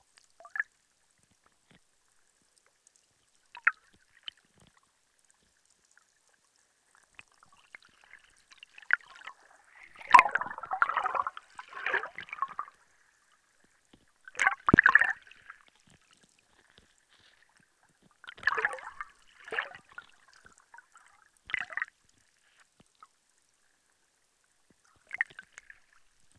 floatng a hydrophone, Rovinj
catching wave sounds on the rocks